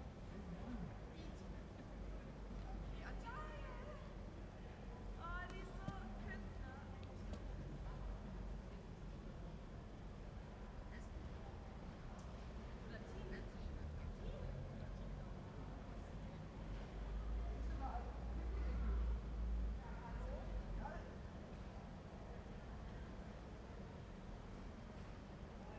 brüsseler platz - snow
-5°C, snowing
Köln, Deutschland